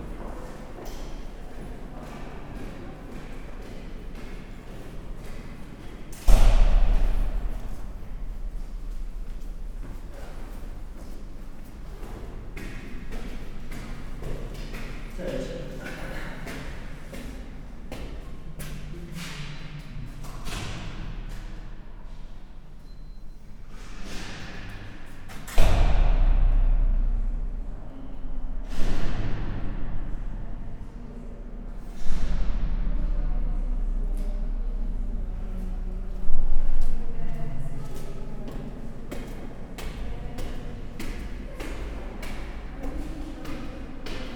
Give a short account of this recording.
big, concrete staircase in the Maraton office building. workers going up the stairs. big, fireproof doors slamming on various floors causing immense reverberations. (roland r-07)